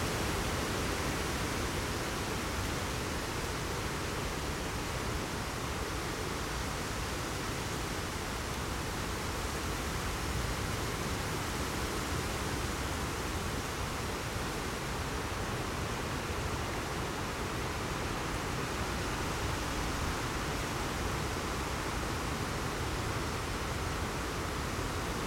Kiauliupys, Lithuania, wind
Strong wind at sand quarry. Google maps are slightly outdated, so it not show today's sand quarry territory...Because od really strong wind I was forced to hide my micro Ushi mics under the rooths of fallen tree.
Utenos apskritis, Lietuva, September 2021